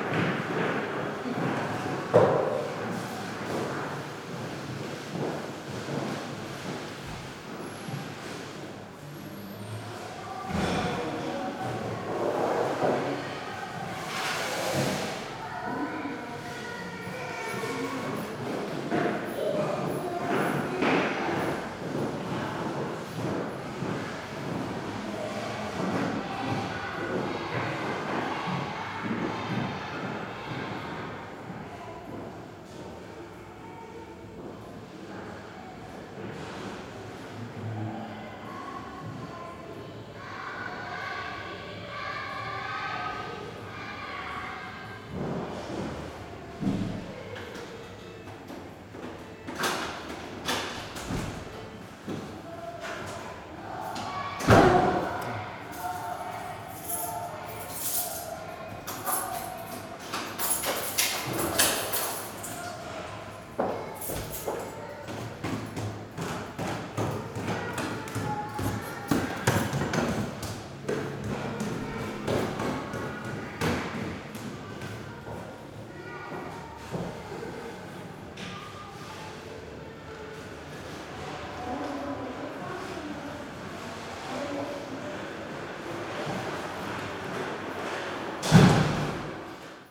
Berlin, Urbanstr., Nachbarschaftshaus - stairway area
Nachbarschaftsshaus, entry hall, stairway, ambience